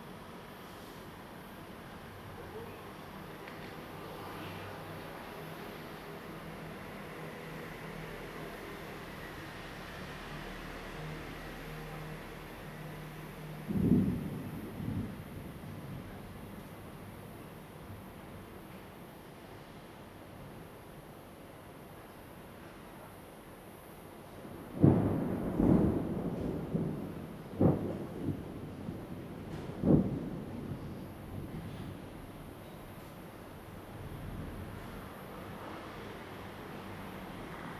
Thunderstorm coming
Zoom H2n Spatial audio